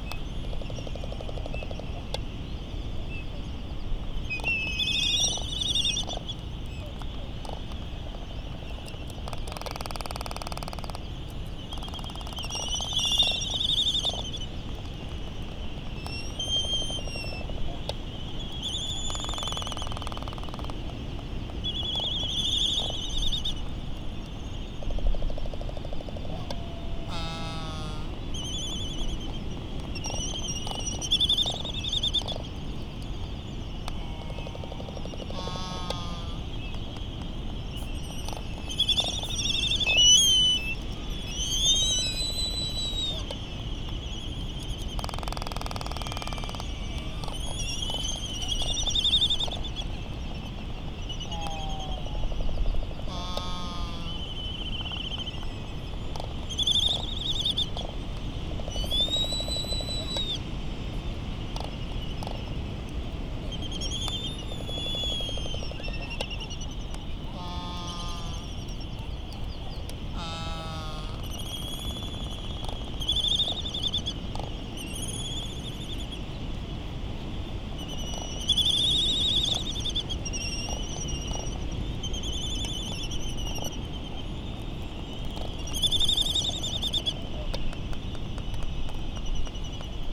United States Minor Outlying Islands - Laysan albatross soundscape ...
Laysan albatross soundscape ... Sand Island ... Midway Atoll ... recorded in the lee of the Battle of Midway National Monument ... open lavalier mics either side of a furry covered table tennis bat used as a baffle ... laysan calls and bill rattling ... very ... very windy ... some windblast and island traffic noise ...
19 March 2012, 16:10